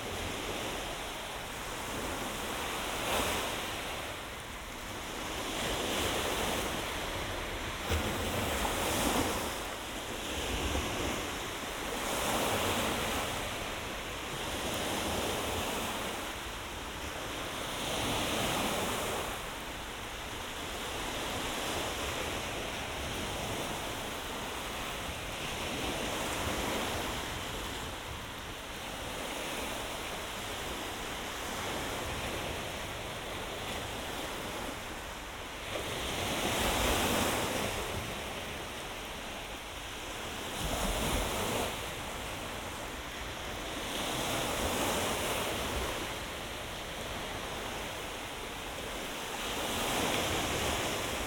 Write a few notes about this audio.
Standing on a highest place on Lithuanian seashore: so called The Dutchman's Cap. Just after a heavy rain, so there were rare 10 minutes to be alone on this tourists place. Sennheiser Ambeo headset.